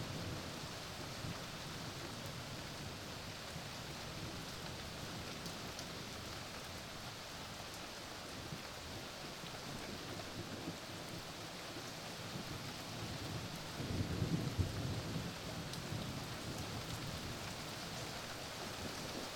Connolly St, Midleton, Co. Cork, Ireland - Incoming Rain
Sounds of thunder and rain, interspersed with family life.